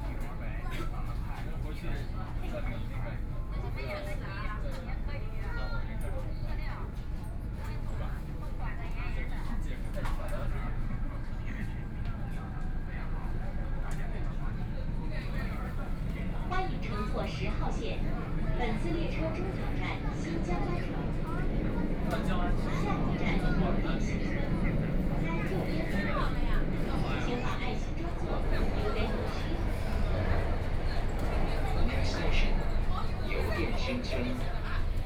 Shanghai, China - Line 10 (Shanghai Metro)
from East Nanjing Road Station to Youdian Xincun Station, Binaural recording, Zoom H6+ Soundman OKM II
23 November, 19:01